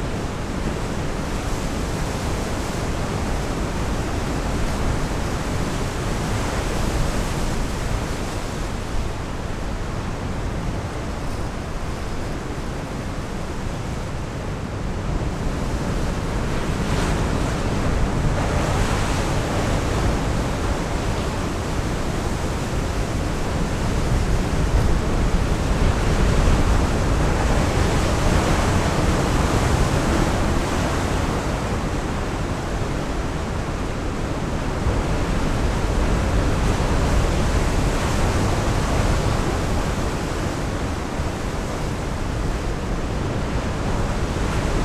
La mer est souvent agité au phare de Ploumanac'h. Les vagues sont assourdissantes.
At the Ploumanach lighthouse pretty wild waves crush into the rocks.
Getting closer is dangerous.
/Oktava mk012 ORTF & SD mixpre & Zoom h4n